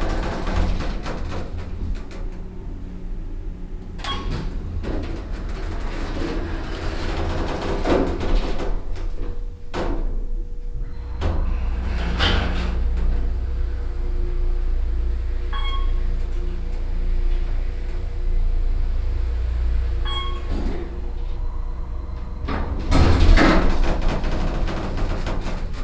Stevenson Ranch, CA, USA - Elevator Journey into Womb-like Corridor
Olympus LS10/ Soundman OKMII - some corrective EQ with Flux Epure-II